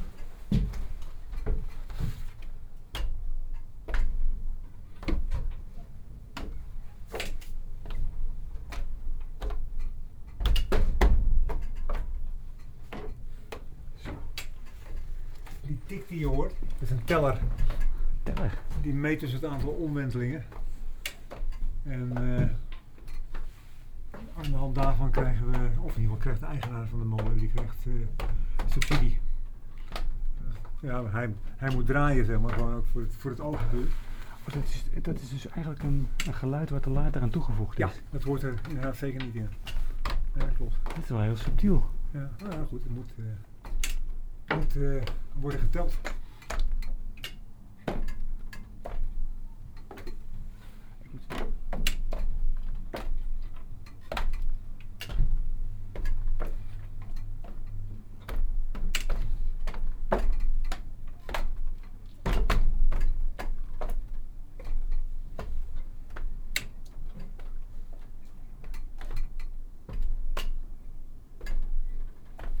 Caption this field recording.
de trap op, tussenverdieping en dan boven onder de kap, taking the little stairs to go up under the roof of the windmill